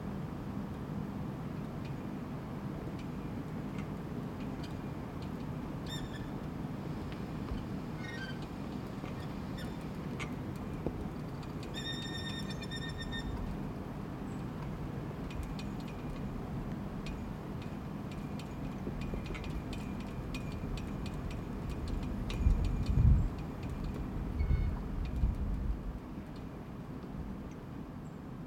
Via Antonio Pacinotti, Verona VR, Italia - flags flapping in the wind
flags in the wind
Veneto, Italia, 12 June, 15:49